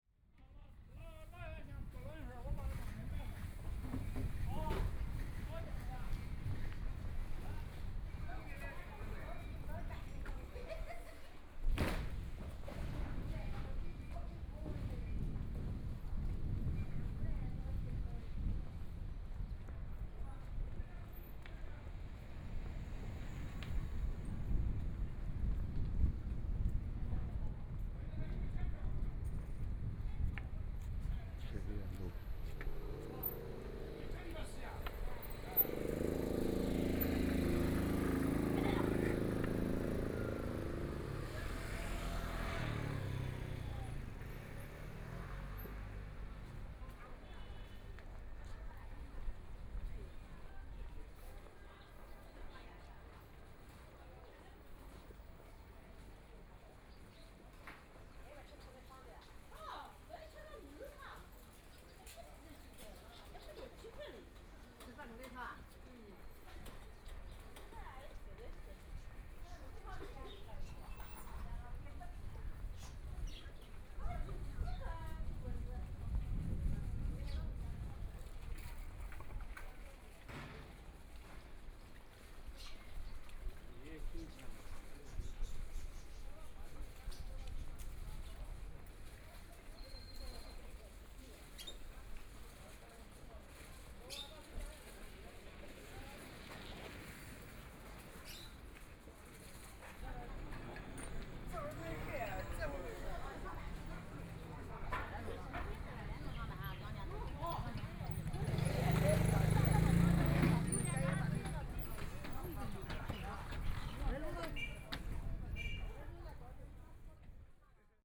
{"title": "Xueyuan Road, Huangpu District - walk through the old streets", "date": "2013-11-25 14:44:00", "description": "Through the old streets and communities, Walking through the Street, Traffic Sound, Walking through the market, Walking inside the old neighborhoods, Binaural recording, Zoom H6+ Soundman OKM II", "latitude": "31.23", "longitude": "121.49", "altitude": "11", "timezone": "Asia/Shanghai"}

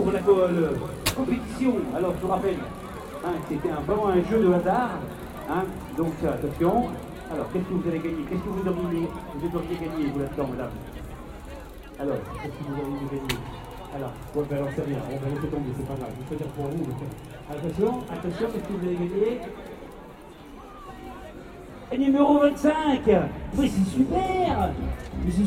{"title": "Poitiers, Central Place - Carnival, Tombola", "date": "2013-02-12 17:42:00", "description": "Small town carnival in preperation", "latitude": "46.58", "longitude": "0.34", "altitude": "122", "timezone": "Europe/Paris"}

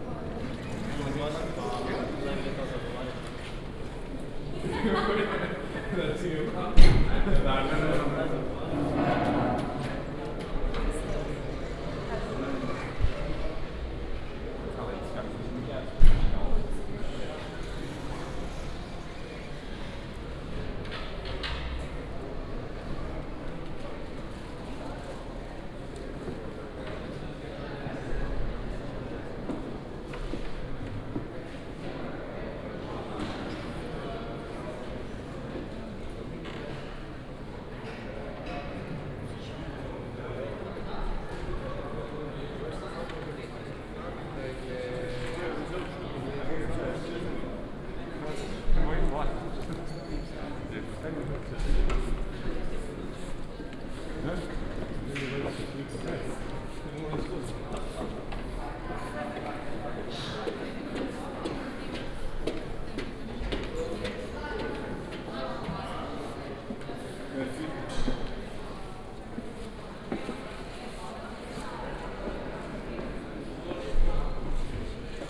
{"title": "Maribor, university", "date": "2011-11-17 12:00:00", "description": "maribor university, tech dept., ambience, walk, binaural", "latitude": "46.56", "longitude": "15.64", "altitude": "273", "timezone": "Europe/Ljubljana"}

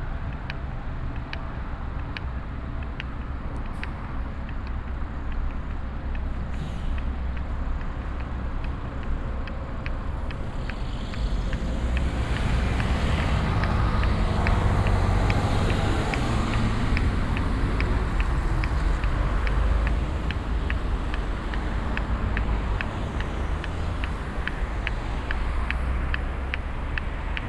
{"title": "essen, freiheit, clicking traffic signs", "date": "2011-06-09 22:27:00", "description": "A clicking row of traffic signs beating the traffic noise\nProjekt - Klangpromenade Essen - topographic field recordings and social ambiences", "latitude": "51.45", "longitude": "7.01", "altitude": "87", "timezone": "Europe/Berlin"}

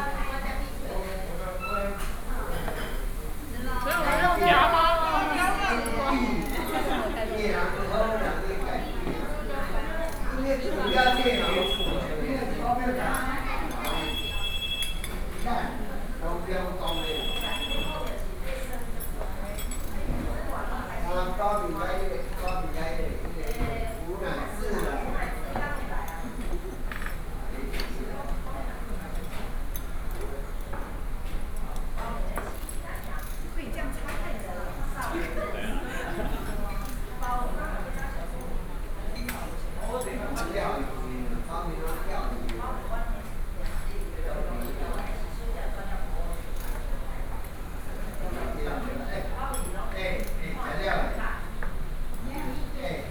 {"title": "Taipei, Taiwan - In the restaurant", "date": "2013-06-25 20:22:00", "description": "In the restaurant, Sony PCM D50 + Soundman OKM II", "latitude": "25.05", "longitude": "121.53", "altitude": "13", "timezone": "Asia/Taipei"}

